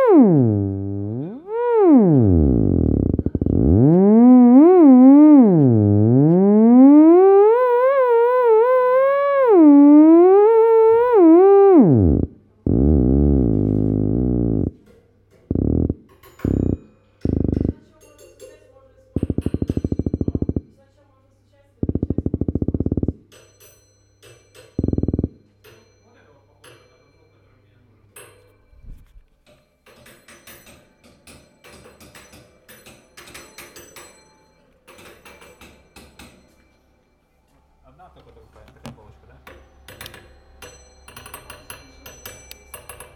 Ligovsky Ave, St Petersburg, Russia - Sound Museum - part 2 (Theremin)
Binaural recordings. I suggest to listen with headphones and to turn up the volume.
It's the Museum of Sound, placed in St. Peterburg. Here, me playing the Thereming by Moog!
Recordings made with a Tascam DR-05 / by Lorenzo Minneci
Sankt-Peterburg, Russia, 25 July